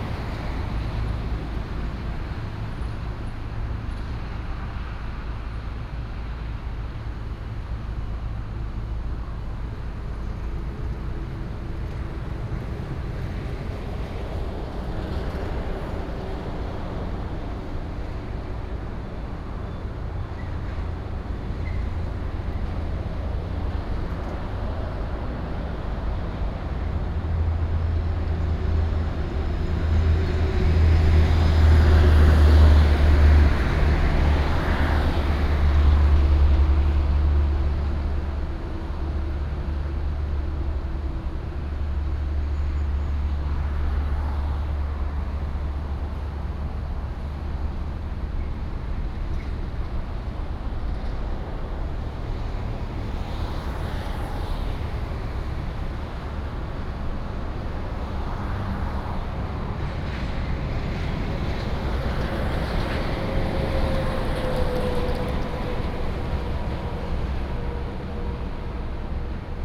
Ln., Sec., Guangfu Rd., East Dist., Hsinchu City - next to the highway
Standing next to the highway, traffic sound, Binaural recordings, Sony PCM D100+ Soundman OKM II